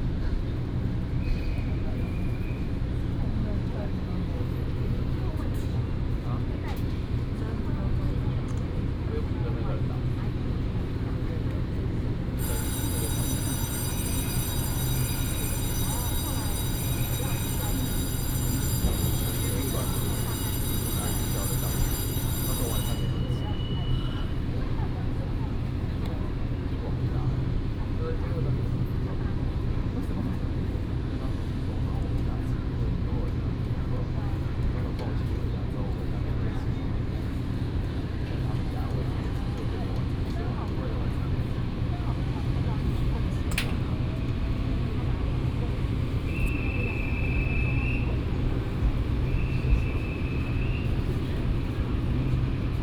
臺中火車站, Taichung City, Taiwan - In the station platform
In the station platform